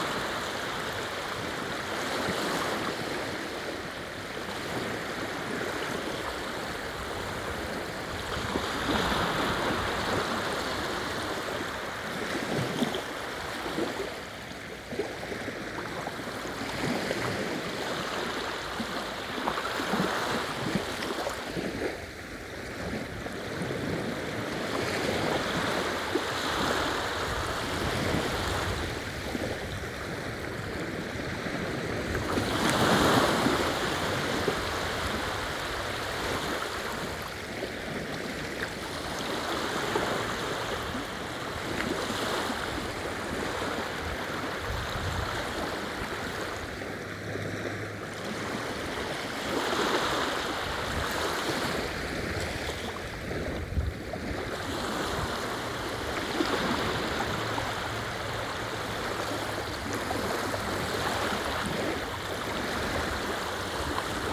Beach, Jantar, Poland - (843 AB MKH) Waves at the beach
Recording of waves at the beach. This has been done simultaneously on two pairs of microphones: MKH 8020 and DPA 4560.
This one is recorded with a pair of Sennheiser MKH 8020, 17cm AB, on Sound Devices MixPre-6 II.
powiat nowodworski, województwo pomorskie, Polska, 30 September 2021